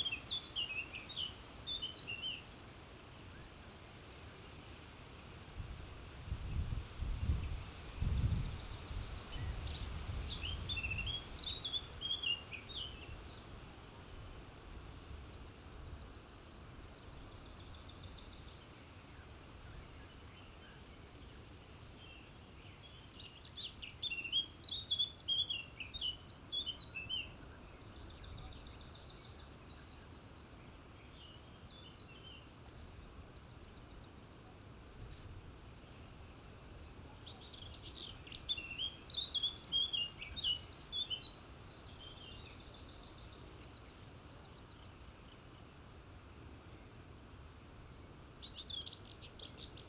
Neuküstrinchen, Deutschland - river Oder bank, wind
at the river Oder, german / polish border, wind in trees
(Sony PCM D50, DPA4060)
Oderaue, Germany